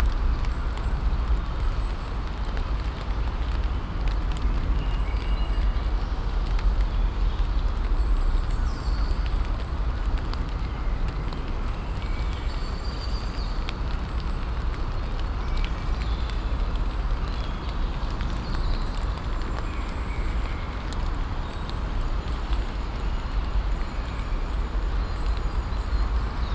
Stadtparkweg, Kiel, Deutschland - Light rain in the forest
Light rain falling on the leaves in the forest, singing birds, plane noise at the beginning, omnipresent traffic noise floor, cars crossing the expansion gaps of the two bridges about 1.5 km left and right to this position. Very low frequent rumble caused by a ship passing on the Kiel-Canal. Binaural recording with Tascam DR-100 MK III, Soundman OKM II Klassik microphone.